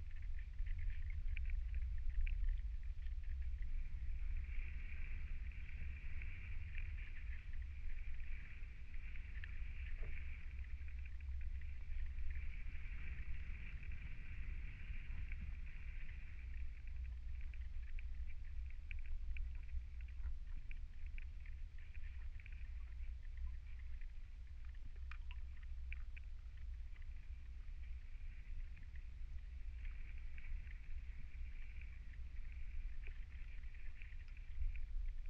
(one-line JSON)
{"title": "Kranenburgweg, Den Haag - hydrophone rec in the shore", "date": "2009-05-10 20:21:00", "description": "Mic/Recorder: Aquarian H2A / Fostex FR-2LE", "latitude": "52.09", "longitude": "4.27", "altitude": "8", "timezone": "Europe/Berlin"}